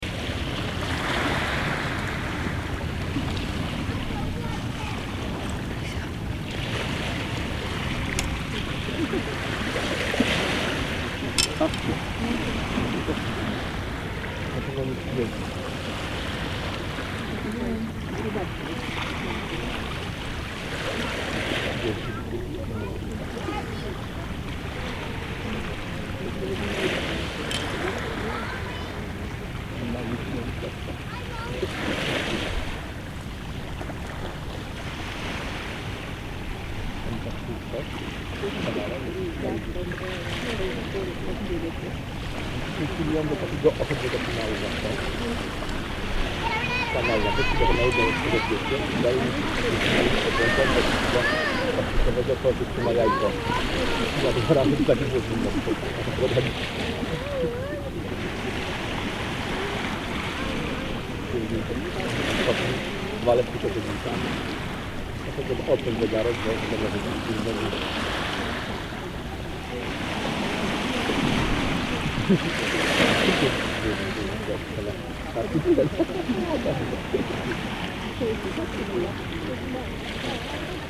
Dun Laoghaire, Co. Dublin, Ireland - Dun Laoghaire
Recorded on the pier in Dun Laoghaire. Fisherman, kids and a beautiful late afternoon